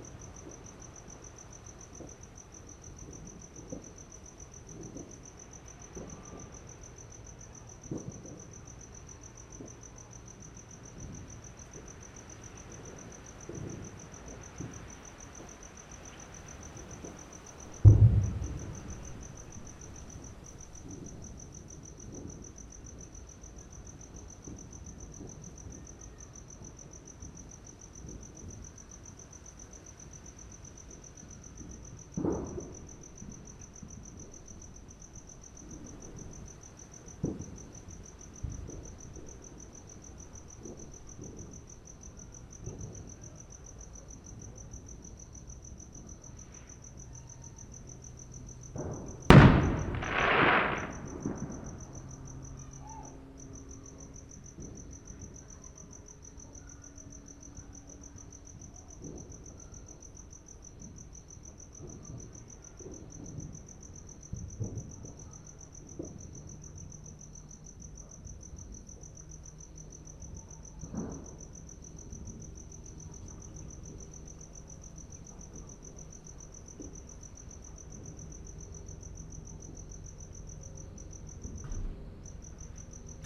St, Linden, Randburg, South Africa - End of 2017 Celebrations
New Year Fireworks displays from private homes around North Western Johannesburg. Wind, dogs barking, fireworks and a light aircraft taking the aerial view of the celebration of the passing of 2017. Piezo EM172's on a Jecklin disc to SD702
January 2018